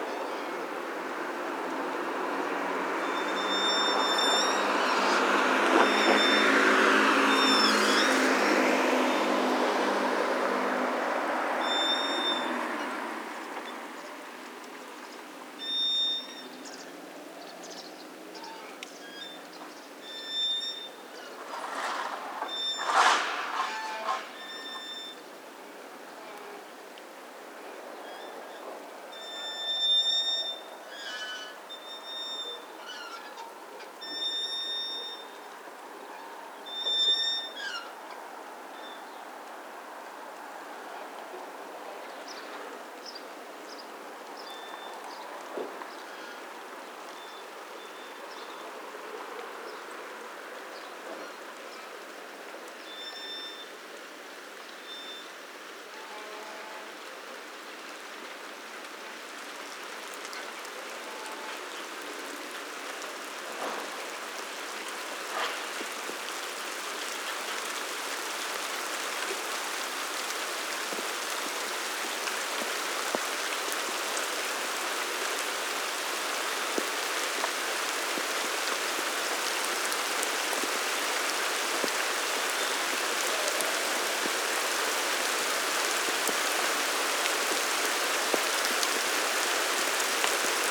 SBG, Carrer Vell - Entrada al pueblo
Tarde lluviosa de domingo a la entrada del pueblo.
St Bartomeu del Grau, Spain, 14 August 2011